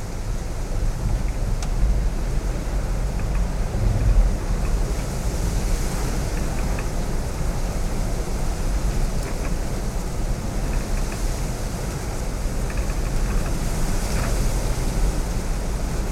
Recorded on a windy day in a broken shed near the sea, Härnöverken, Härnösand. Recorded with two omnidirectional microphones
Västernorrlands län, Norrland, Sverige, 18 September 2020, ~21:00